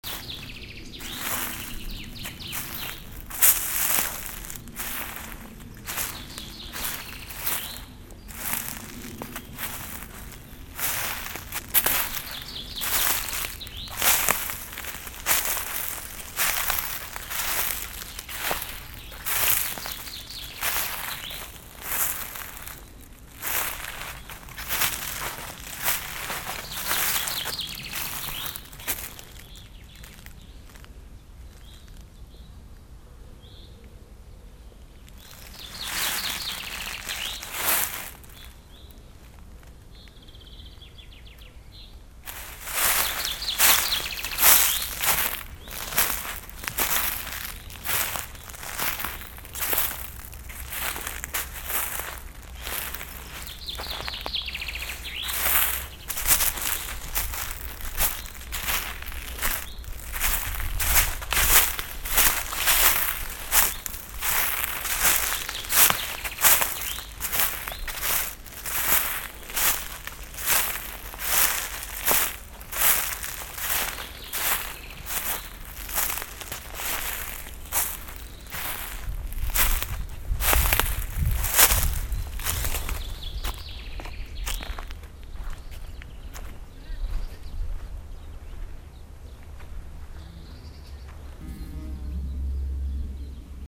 otterlo, houtkampweg, kröller-müller museum - steps on dry leaves
in the museum park, walking on dry leaves
international soundmap : social ambiences/ listen to the people in & outdoor topographic field recordings
houtkampweg, kröller-müller museum, park